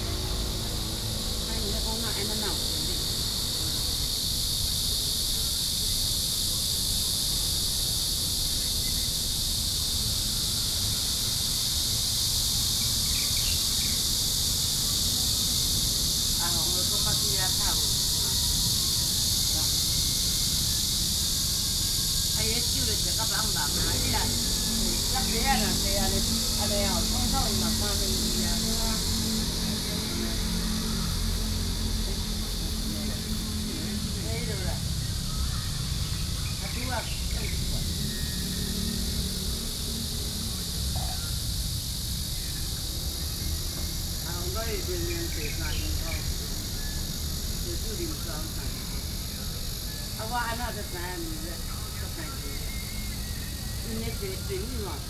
長壽親子公園, Shulin Dist. - in the Park
in the Park, Cicadas called, Hot weather, Bird calls
Binaural recordings
Sony PCM D50 + Soundman OKM II
New Taipei City, Taiwan, 8 July 2012, ~12:00